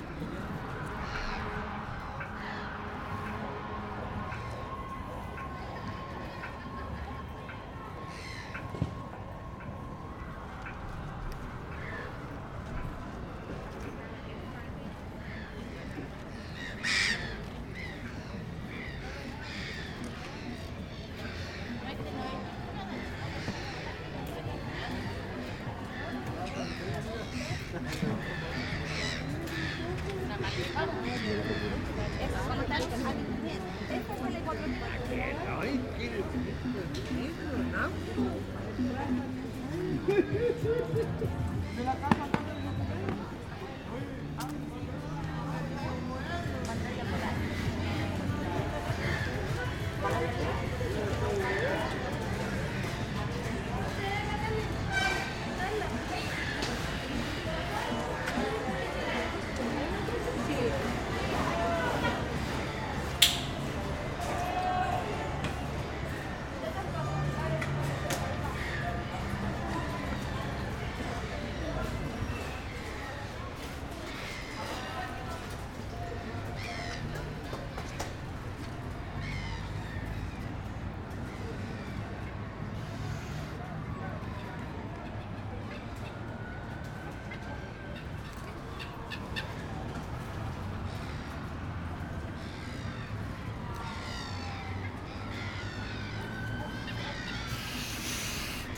Av. Arturo Prat, Valdivia, Los Ríos, Chili - AMB VALDIVIA HARBOUR TRAFFIC ANIMATED WALLA WALK MS MKH MATRICED
This is a recording of the harbour located in Valdivia. I used Sennheiser MS microphones (MKH8050 MKH30) and a Sound Devices 633.